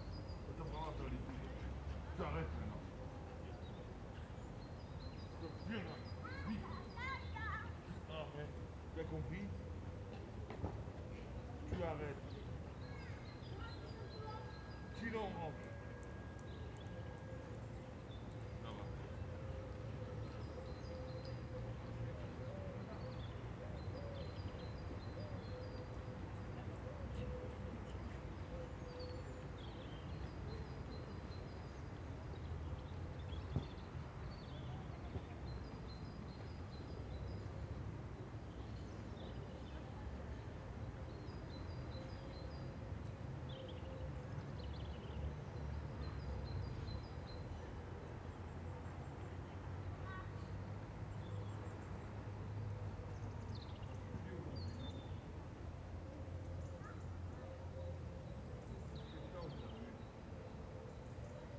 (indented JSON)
{
  "title": "Parc des Arènes de Cimiez, Nice, France - Dog walkers and wood pigeons",
  "date": "2015-03-14 10:18:00",
  "description": "Dog walkers talking to their dogs, wood pigeons talking to each other, children kicking footballs in the distance.\nRecorded on Zoom H4n internal mics",
  "latitude": "43.72",
  "longitude": "7.28",
  "altitude": "111",
  "timezone": "Europe/Paris"
}